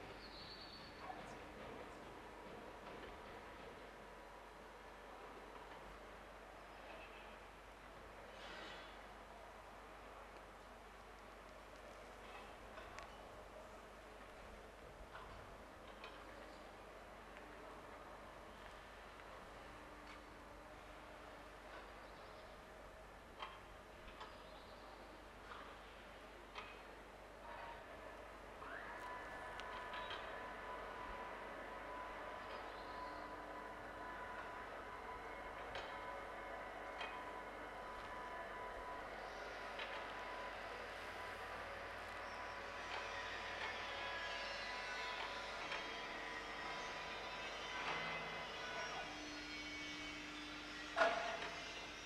L'Aquila, Piazza Chiarino - 42.35307, 13.39895
L'Aquila AQ, Italy